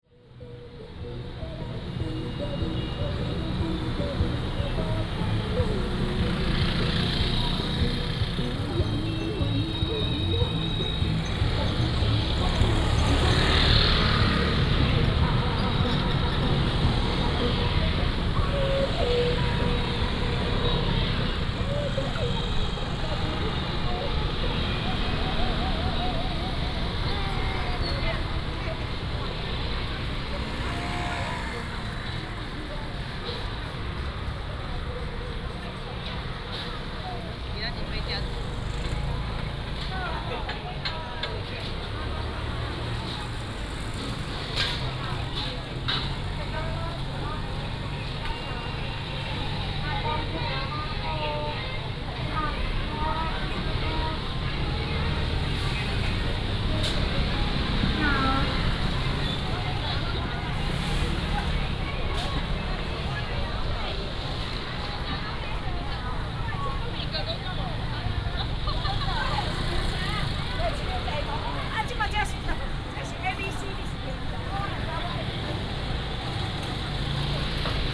Daming Rd., Lukang Township - Walking in the market
Walking in the market, Shopping Street
Changhua County, Taiwan, 15 February 2017